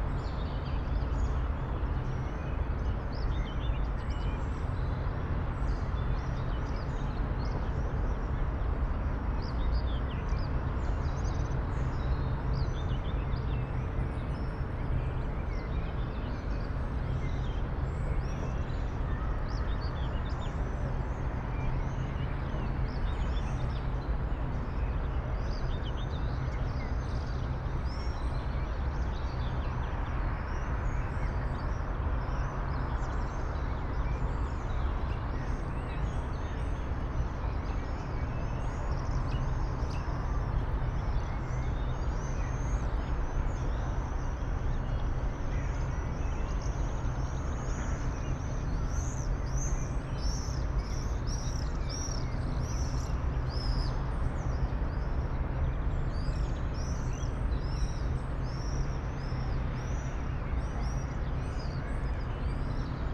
{"title": "Maribor, Piramida - a city awakes", "date": "2012-05-31 08:30:00", "description": "half way up on Piramida hill, in the vineyard above the city. at this time, not so many distinct sound sources are present, except the birds, so it was possible to catch a kind of fundamental tone of the city's activity, at high amplification levels.\n(SD702, 2xNT1a)", "latitude": "46.57", "longitude": "15.65", "altitude": "342", "timezone": "Europe/Ljubljana"}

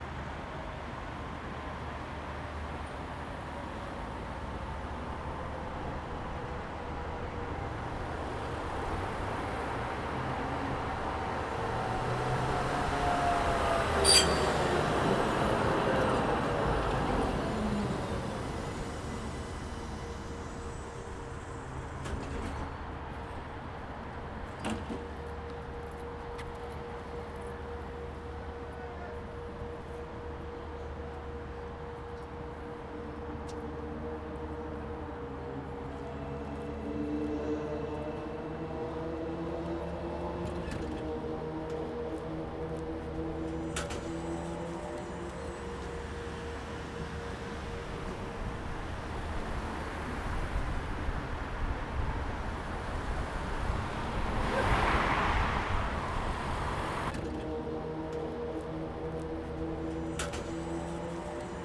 {"title": "Budapest, Március 15. tér, Ungheria - Tram Notturno", "date": "2019-08-22 22:06:00", "description": "Night-trams in Budapest are shy and move around by being loaded of thoughts - by Herrera Dos - Verso // Oriente", "latitude": "47.49", "longitude": "19.05", "altitude": "109", "timezone": "Europe/Budapest"}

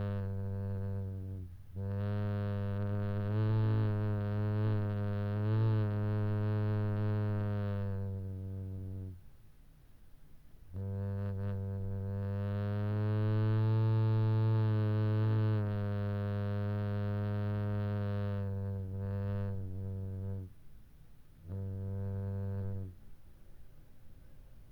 {"title": "Dumfries, UK - whistling window seal ...", "date": "2022-02-01 11:37:00", "description": "whistling window seal ... in double glazing unit ... olympus ls14 integral mics on mini-tripod ...", "latitude": "54.98", "longitude": "-3.48", "altitude": "8", "timezone": "Europe/London"}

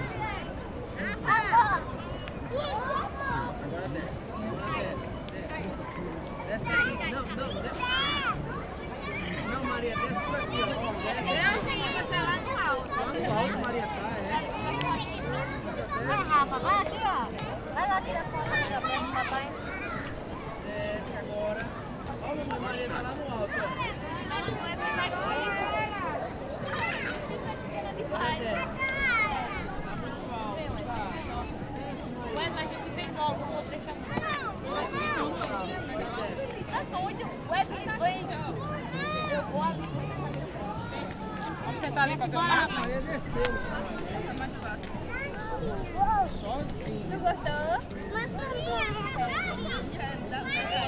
{"title": "M.Lampis: Cabras - The Bottarga festival", "latitude": "39.93", "longitude": "8.53", "altitude": "8", "timezone": "GMT+1"}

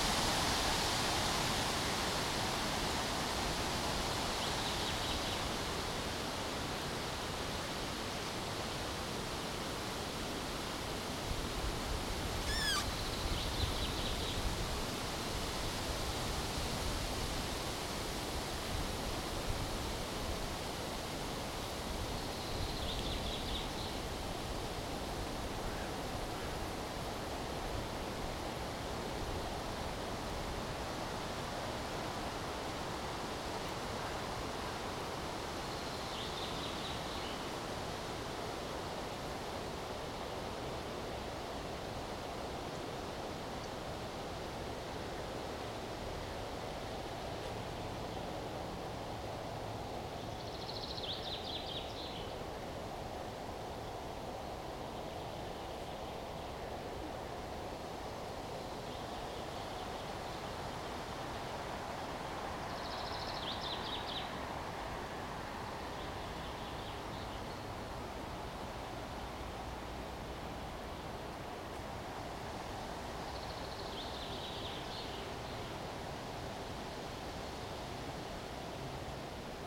{"title": "Сергиев Посад, Московская обл., Россия - Wind noise in the trees", "date": "2021-05-30 14:28:00", "description": "Wind noise in the trees. The wind gets stronger, weakens and then gets stronger again. Sometimes you can hear the creak of trees and birds and the noise of traffic in the distance.\nRecorded with Zoom H2n, surround 2ch mode", "latitude": "56.30", "longitude": "38.20", "altitude": "197", "timezone": "Europe/Moscow"}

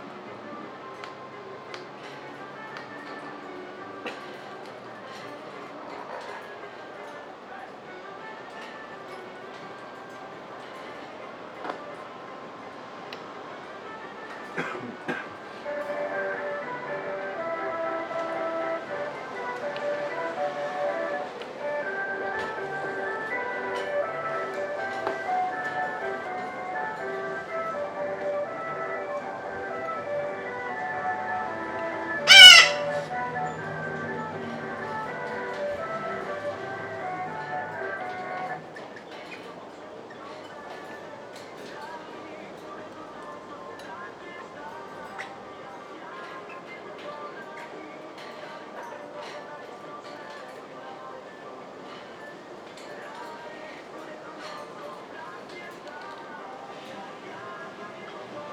{"title": "Wyspa Sobieszewska, Gdańsk, Poland - Papugi", "date": "2015-06-09 12:21:00", "description": "Papugi rec. Rafał Kołacki", "latitude": "54.35", "longitude": "18.83", "altitude": "10", "timezone": "Europe/Warsaw"}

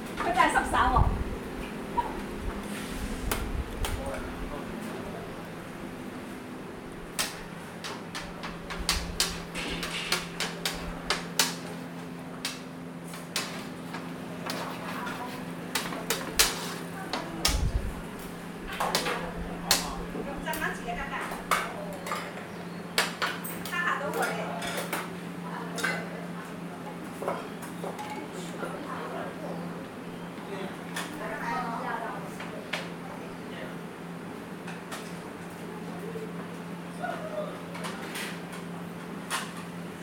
{"title": "西貢舊墟 - 金山粥店", "date": "2016-09-16 10:30:00", "description": "金山粥店，西貢老字號，街坊們絡繹不絕。", "latitude": "22.38", "longitude": "114.27", "altitude": "8", "timezone": "Asia/Hong_Kong"}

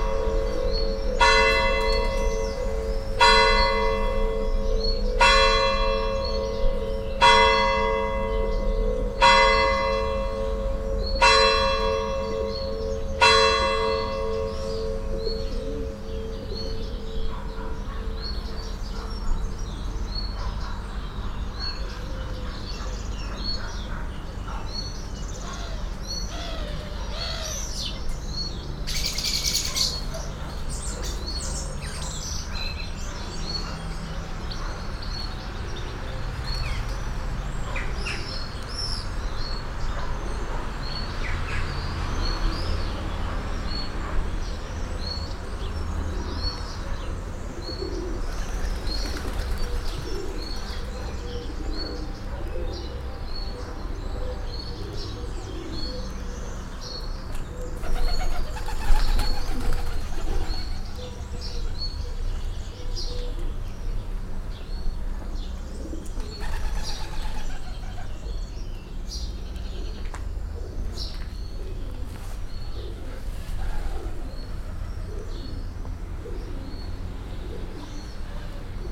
{"title": "Via O. Caosi, Serra De Conti AN, Italia - the pigeon place", "date": "2018-05-26 10:02:00", "description": "8 of 10 tolling of the bells from the town hall tower (XIX century), murmuring pigeons, flaying pigeons, traffic from distance.\n(Binaural: Dpa4060 into Shure FP24 into Sony PCM-D100)", "latitude": "43.54", "longitude": "13.04", "altitude": "214", "timezone": "Europe/Rome"}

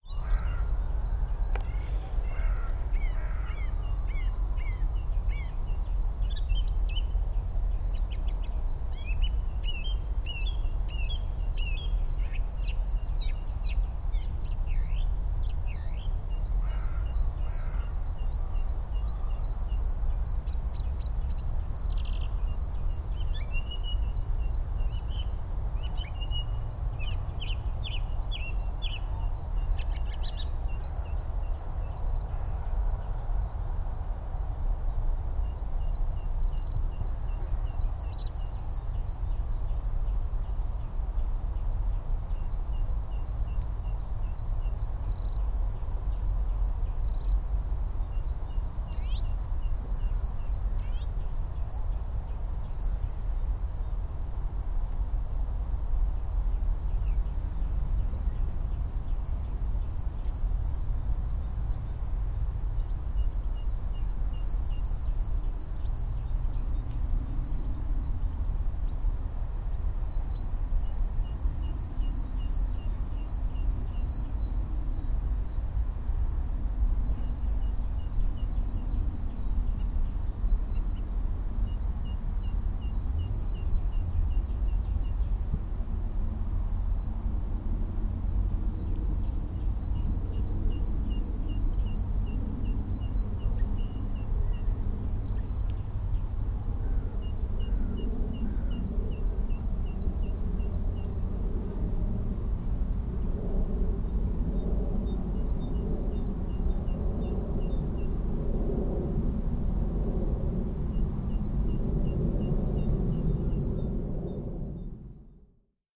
{"title": "on the bank of the River Kennet, Reading, UK - Great Tit and Songthrush", "date": "2015-02-08 14:46:00", "description": "This afternoon felt like the first sounds of spring; it was actually warm and we could hear two Great Tits doing their squeaky wheel song, followed by the song of a Robin and then the beautiful song of a Songthrush. Mark and I stood on the bank of the River Kennet listening to the Songthrush singing in a tree facing us on the opposite bank. The song went on until a passerby startled the bird. I always notice how loud the traffic sound from the A33 is in this area, but also how diverse the sounds are within this little unkempt patch of land.", "latitude": "51.44", "longitude": "-0.98", "altitude": "36", "timezone": "Europe/London"}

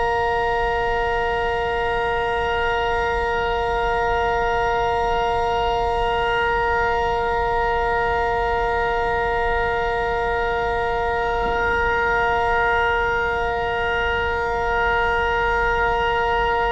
{"title": "dortmund, reinoldi church, main church hall - dortmund, reinoldikirche, organ tuning 02", "description": "inside the main church hall - tuning of the organ part 02\nsoundmap nrw - social ambiences and topographic field recordings", "latitude": "51.51", "longitude": "7.47", "altitude": "96", "timezone": "Europe/Berlin"}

